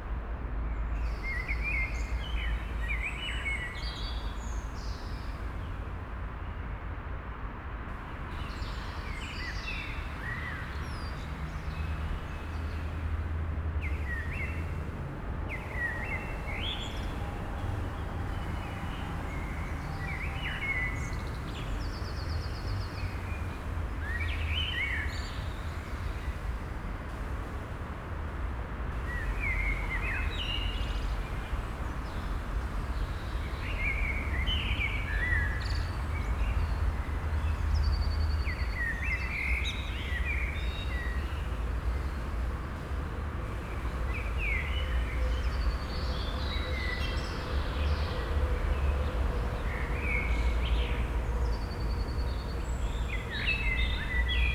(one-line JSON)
{"title": "Südostviertel, Essen, Deutschland - essen, goebenstr 24, private garden", "date": "2014-04-09 06:15:00", "description": "A second recording, some minutes later - traffic increase\nEine zweite Aufnahme einige Minuten später. Sukzessive Zunahme des Verkehrs.\nProjekt - Stadtklang//: Hörorte - topographic field recordings and social ambiences", "latitude": "51.45", "longitude": "7.03", "altitude": "105", "timezone": "Europe/Berlin"}